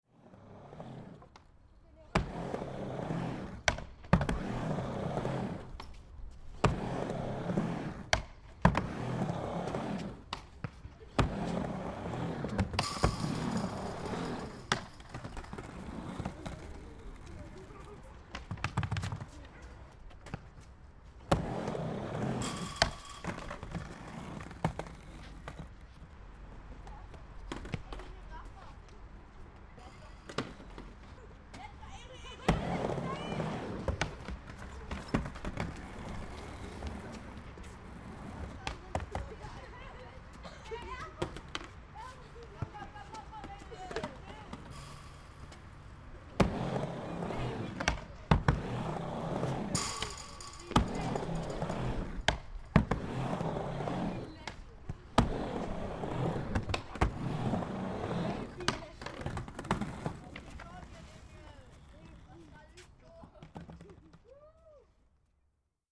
half pipe im park
ein skater in der half pipe, im friedrichshain-park
11.01.2008 16:00
Berlin, Germany